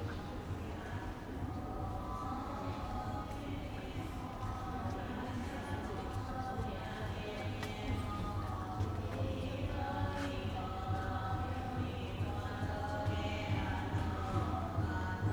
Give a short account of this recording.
Walking in the temple, Zoom H4n + Rode NT4